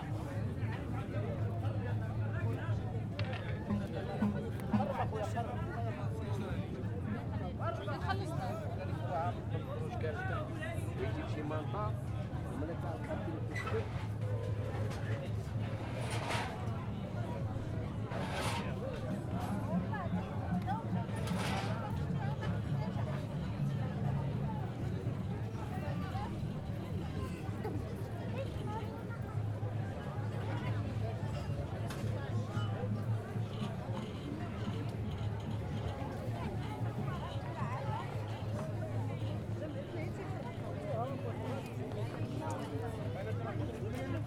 Place Jemaa El Fna, Marrakech, Maroc - Place Jemaa El Fna in the afternoon
During the day, snake charmers, people who shows their monkey, women who put henna on your hands, are in the place
Marrakesh, Morocco, 27 February, ~15:00